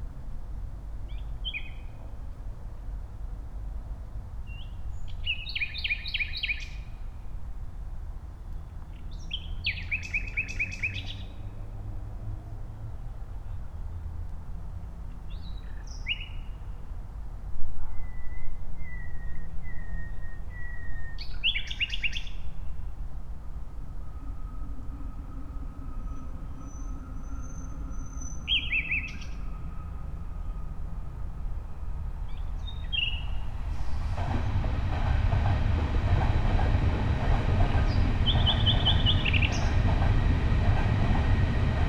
{"title": "Gleisdreieck park, Kreuzberg, Berlin - midnight, nightingale and trains", "date": "2016-05-27 00:05:00", "description": "Berlin, Park am Gleisdreieck, a nightingale surrounded by trains, midnight ambience\n(Sony PCM D50, Primo EM172 AB)", "latitude": "52.50", "longitude": "13.37", "altitude": "38", "timezone": "Europe/Berlin"}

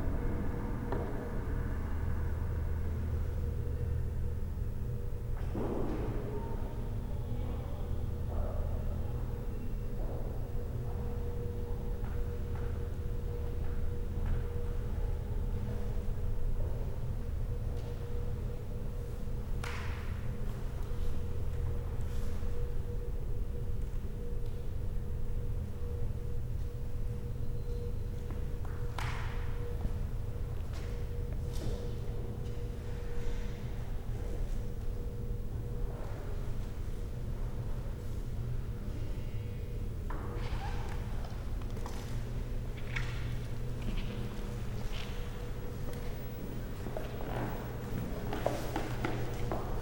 {"title": "Sounds of Silence, Menil Collection, Houston, Texas, USA - Sounds of Silence", "date": "2012-10-18 06:15:00", "description": "Soundwalk through the Menil Collection's west wing, housing their 'Silence' exhibition. Shoulder strap clicking, security hassle for touching a volume slider on a phone handset that was an interactive part of the exhibit, broken foot hobble, creaky floors\nBinaural, CA14omnis > DR100 MK2", "latitude": "29.74", "longitude": "-95.40", "altitude": "20", "timezone": "America/Chicago"}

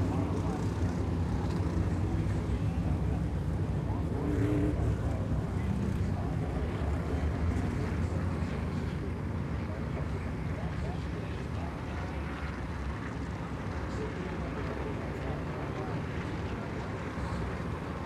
{"title": "Stafford Speedway - Open Modified Feature", "date": "2022-05-20 19:38:00", "description": "The sound of 23 Open Modifieds at Stafford Speedway in their 81 lap feature race", "latitude": "41.96", "longitude": "-72.32", "altitude": "162", "timezone": "America/New_York"}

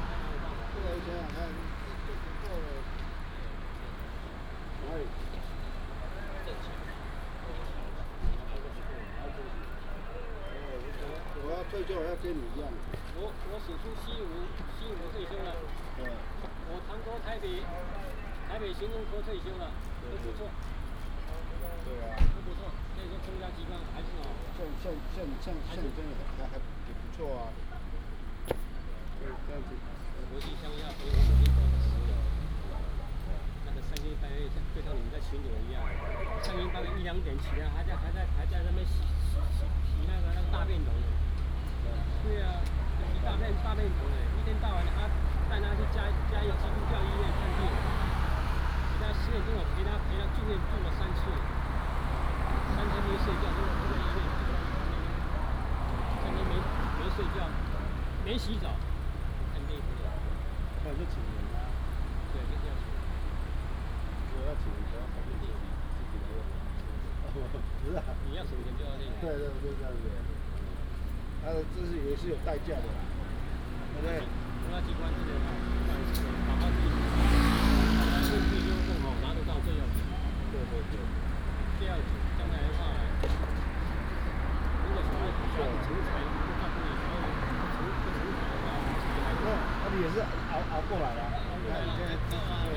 {"title": "中華北路, Qingshui Dist., Taichung City - In front of the convenience store", "date": "2017-10-09 20:59:00", "description": "The police patrolled the convenience store, Traffic sound, Dog sound, Binaural recordings, Sony PCM D100+ Soundman OKM II", "latitude": "24.30", "longitude": "120.60", "altitude": "49", "timezone": "Asia/Taipei"}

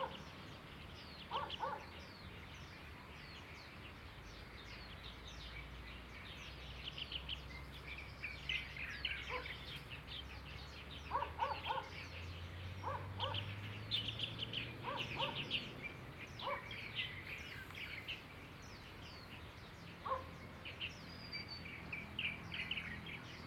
{"title": "硫磺谷遊憩區, Taipei City - Bird and Dog", "date": "2012-11-09 06:23:00", "latitude": "25.14", "longitude": "121.52", "altitude": "143", "timezone": "Asia/Taipei"}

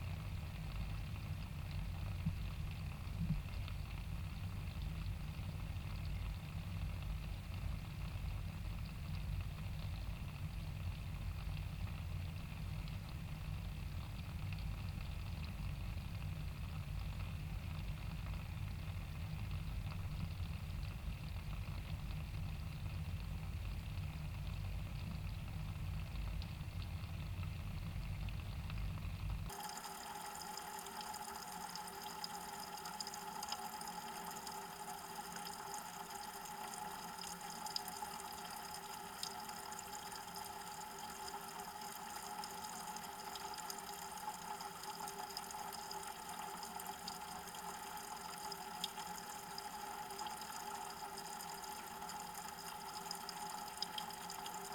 {"title": "Grand Glaize Creek, Valley Park, Missouri, USA - Drainage Pipe", "date": "2020-12-20 14:56:00", "description": "Drainage Pipe Study. Large steel drainage pipe running through the embankment of Grand Glaize Creek. A rivulet of water is emptying out of pipe and falling about 2 feet onto a creek bed of rocks and leaves. 0-30: narrow MS stereo recording. 30-1:00: narrow MS stereo and hydrophone in pipe. 1:00-1:30: hydrophone alone. 1:30-2:00 stereo contact mics attached to pipe on either side of rivulet", "latitude": "38.56", "longitude": "-90.46", "altitude": "120", "timezone": "America/Chicago"}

